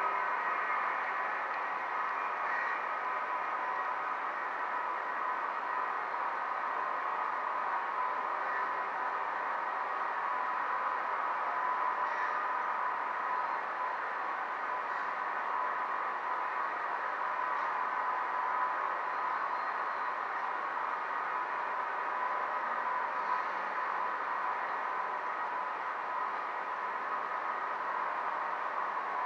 Maribor, Slovenia - one square meter: metal and concrete gate
a metal grid within a concrete gate divides one section of the parking lot from the other. it vibrates with the wind and captures surrounding sounds. recorded with contact microphones. all recordings on this spot were made within a few square meters' radius.